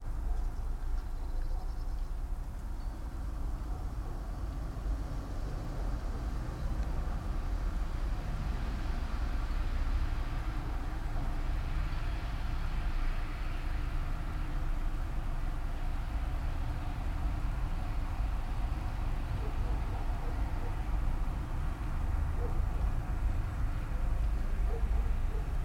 all the mornings of the ... - jan 24 2013 thu
24 January 2013, 08:24